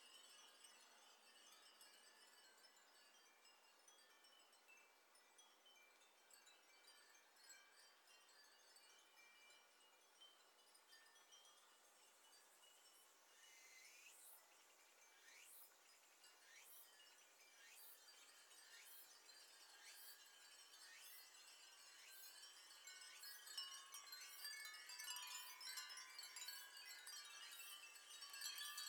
Nara, Tagawa, Fukuoka, Japan - 3000 Glass Wind Chimes at Byodoji
Byodoji hangs a collection of more than 3000 glass wind chimes every summer.
福岡県, 日本, 17 August